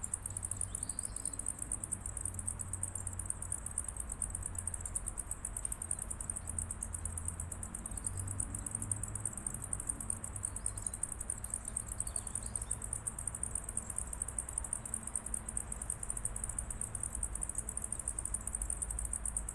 Punto Franco Nord, Trieste, Italy - cricket beats
intense cricket beats near abandoned building, old free harbour Trieste
(Sd702, AT BP4025)
8 September 2013, ~16:00